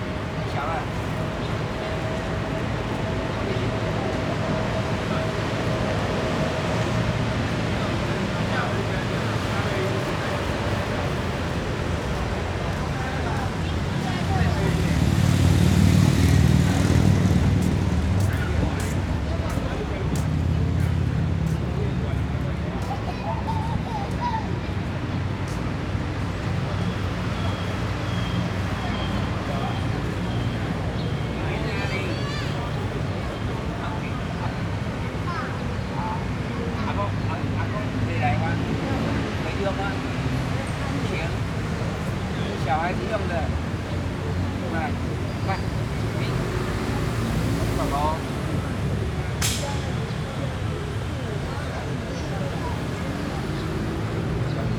尼加拉瓜公園, Luzhou Dist., New Taipei City - in the Park
in the Park, Children and Old people, Traffic Sound
Rode NT4+Zoom H4n
New Taipei City, Taiwan, March 2012